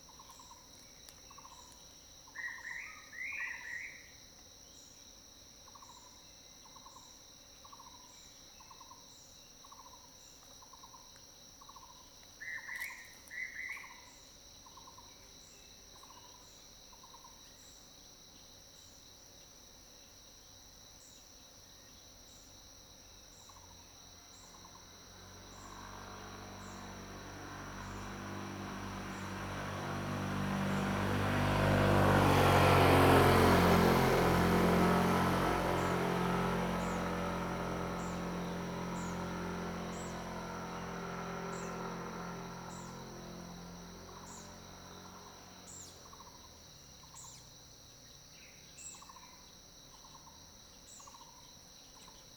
Zhonggua Rd., Puli Township - Bird calls
Bird calls, Early morning
Zoom H2n MS+XY
Puli Township, Nantou County, Taiwan, June 2015